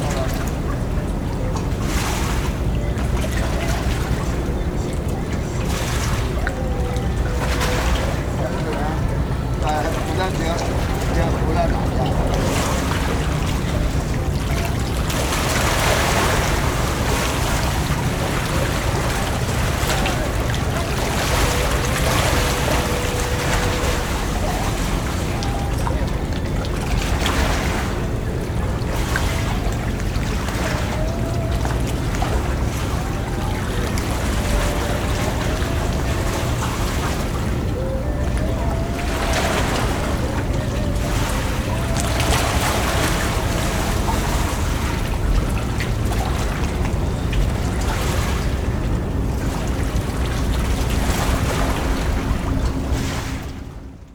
Cianjhen, Kaohsiung - water next to the pier